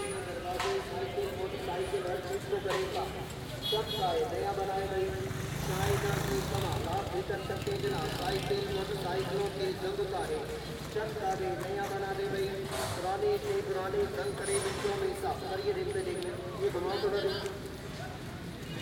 Gulshan-e-Iqbal, Karachi, Pakistan - Market seller with mobile PA
Looking for electronics in the electronics souq. A market seller with a small portable PA system is selling some kind of polish. In the background another seller is chopping ice for drinks.
Recorded with OKM Binaurals into a Zoom H4N
8 October 2015, 13:13